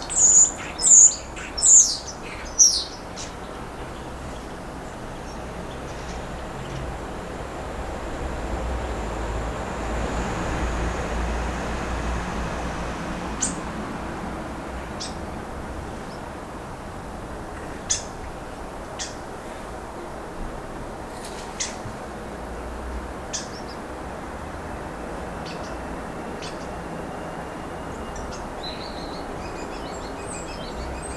Rue Michaulane, Précy-sur-Oise, France - Bird ambiance at noon
Backyard bird ambiance at noon.
(Zoom H5 + Rode M5 MP)